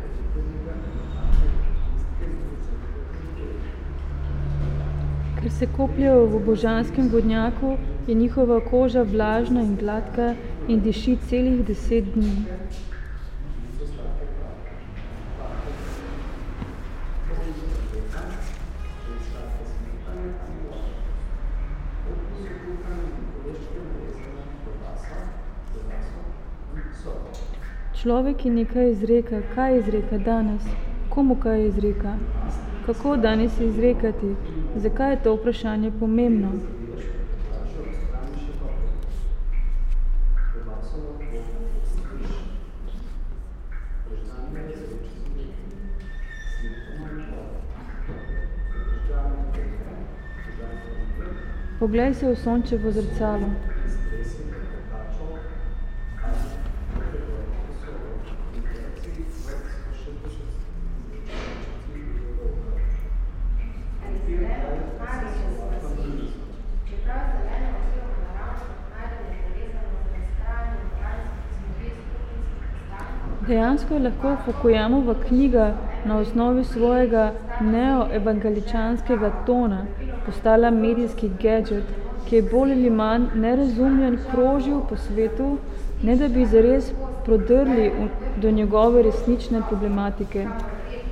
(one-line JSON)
{"title": "Secret listening to Eurydice, Celje, Slovenia - Public reading 7 in Likovni salon Celje", "date": "2012-12-20 19:20:00", "description": "time fragment from 46m13s till 51m15s of one hour performance Secret listening to Eurydice 7 and Public reading, on the occasion of exhibition opening of artist Andreja Džakušič", "latitude": "46.23", "longitude": "15.26", "altitude": "241", "timezone": "Europe/Ljubljana"}